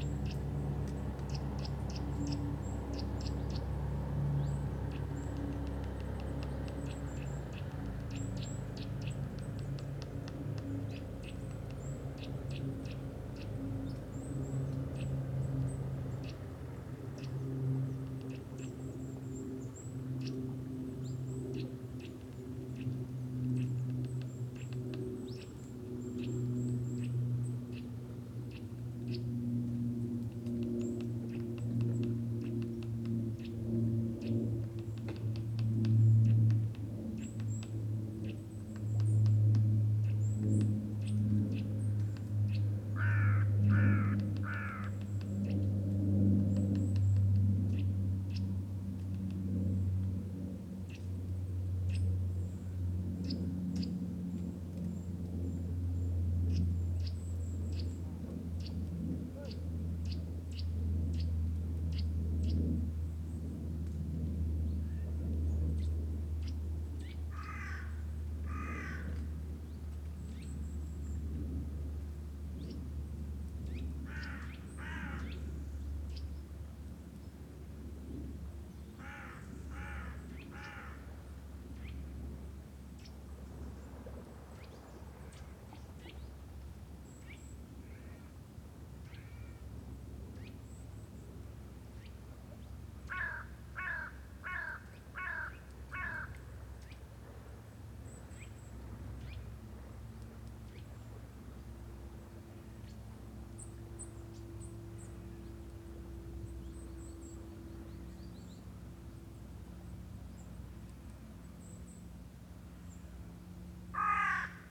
Obere Saale, Deutschland - Totenfels - Above Bleilochtalstausee
Totenfels - Above Bleilochtalstausee.
[Hi-MD-recorder Sony MZ-NH900, Beyerdynamic MCE 82]
Unnamed Road, Saalburg-Ebersdorf, Germany, 11 October 2014